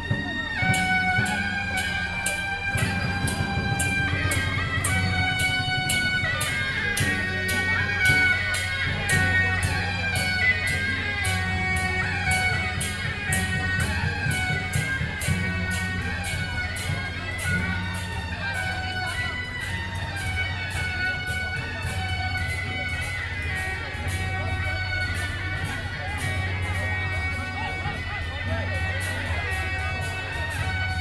Yongfu St., 三重區, New Taipei City - Traditional temple festivals